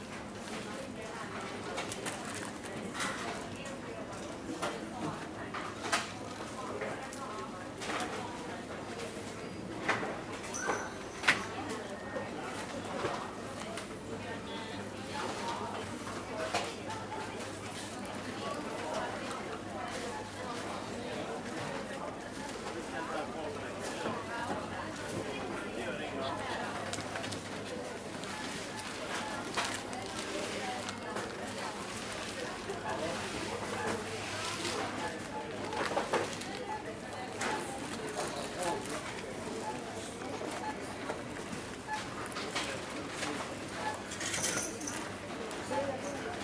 ekholmen, supermarket - ekholmen, supermarkt cash desk
Linköping, Sweden